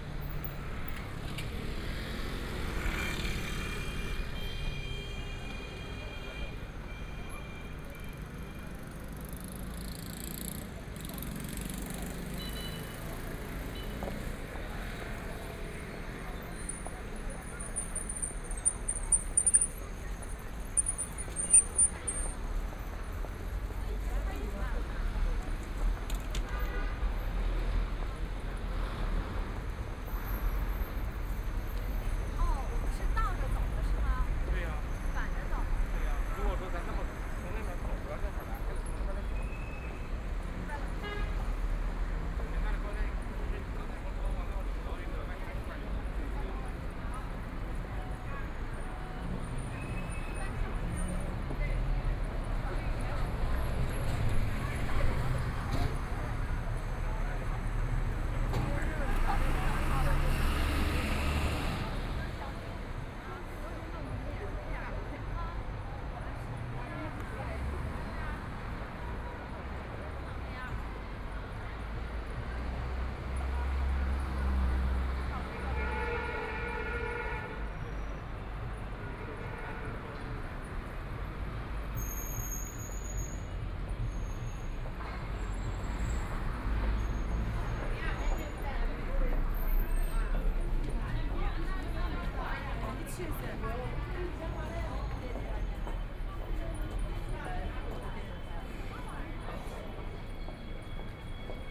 East Nanjin Road, Shanghai - Various sounds on the street
The crowd, Bicycle brake sound, Trumpet, Brakes sound, Footsteps, Traffic Sound, Binaural recording, Zoom H6+ Soundman OKM II